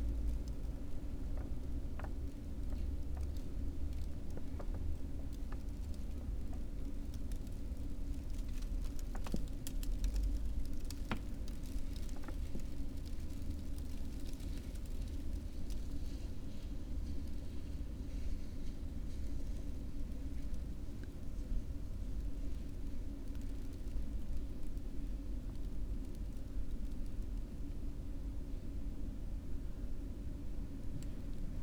while windows are open, Maribor, Slovenia - owl love calls
night birds, light snowflakes on paper, low traffic and gas furnace sounds